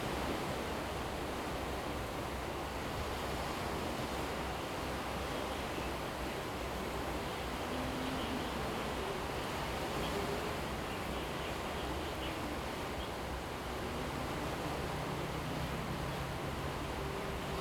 本福村, Hsiao Liouciou Island - Birds singing
Birds singing, Traffic Sound, Sound of the waves
Zoom H2n MS +XY